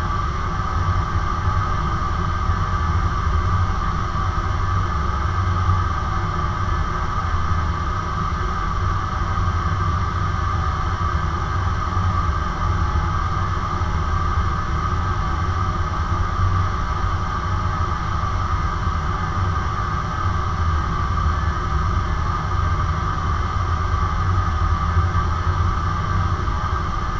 Utena, Lithuania, support wires at a dam
contact mics on support wires of the frozen dam